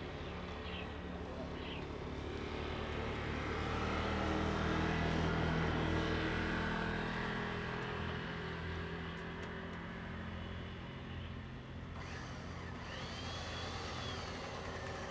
大福漁港, Hsiao Liouciou Island - In Port
In the fishing port
Zoom H2n MS +XY
Liouciou Township, Pingtung County, Taiwan, 1 November, ~15:00